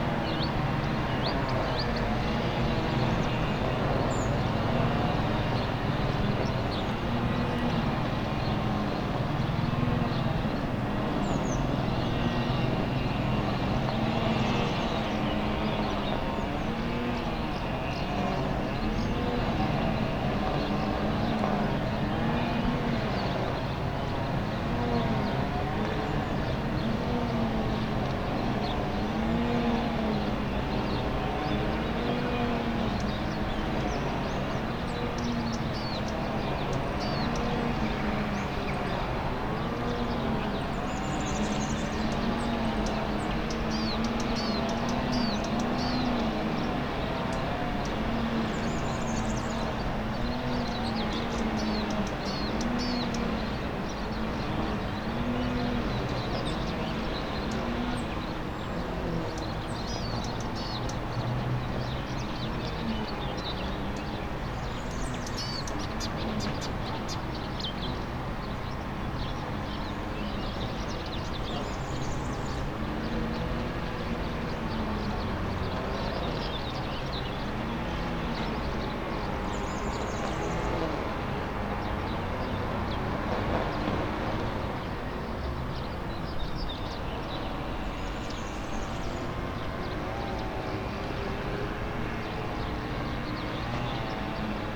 Poznan, balcony - lawnmowers race
maintenance workers racing their lawnmowers since six in the morning. drilling sound of the engines permeated the usually calm area for three straight hours.
7 June 2013, ~08:00